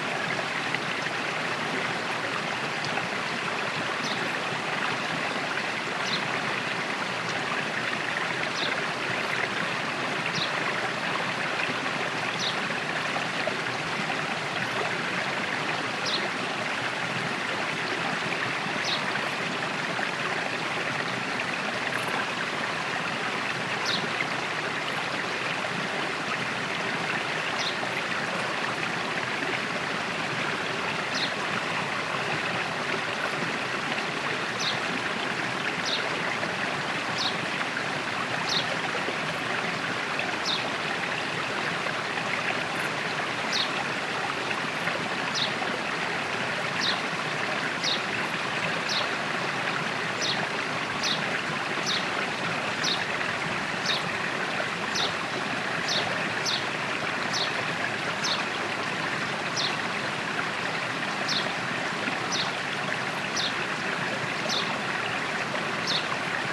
Small river is quite for the season.
Tech Note : Sony PCM-D100 internal microphones, wide position.